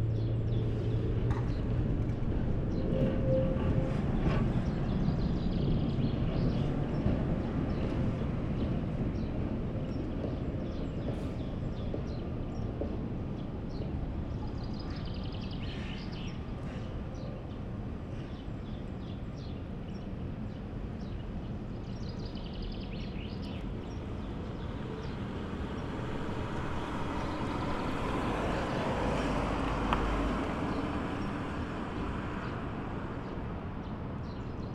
tram line 1+2 u-turn here. spring sunday morning ambience in front of marine academy. sounds of the harbour in the background
Tallinn, Estonia